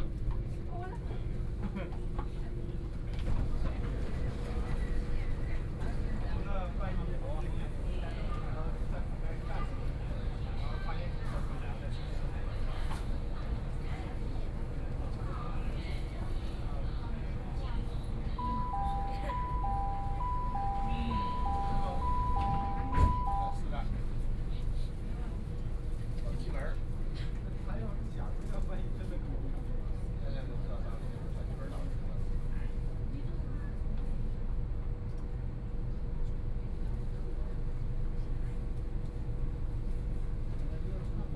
Huangpu District, Shanghai - Line 10 (Shanghai Metro)
from East Nanjing Road Station to Laoxime Station, Binaural recording, Zoom H6+ Soundman OKM II
Huangpu, Shanghai, China